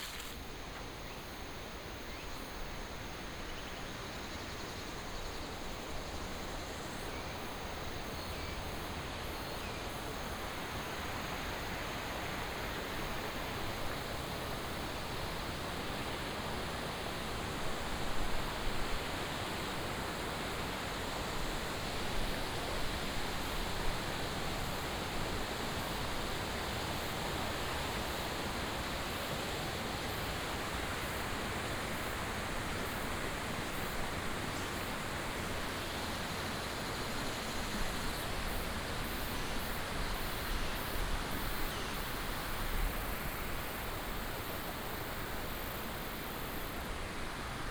八卦力吊橋, Nanzhuang Township - Walking on the suspension bridge
Walking on the suspension bridge, Cicadas, Insects, The sound of birds, stream sound, Binaural recordings, Sony PCM D100+ Soundman OKM II